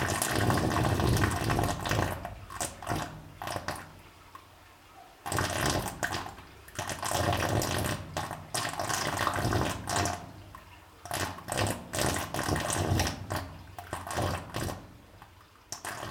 The same sound as the whoopee pipe, but made with a binaural microphone. This pipe has a very big illness and should consult a doctor !
Volmerange-les-Mines, France